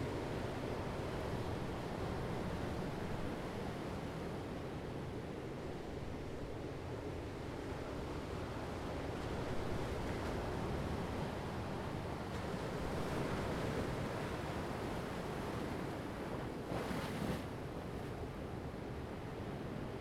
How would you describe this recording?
There are three large steps down to the beach here, periodically the rough waves can be heard slapping over the lowest two.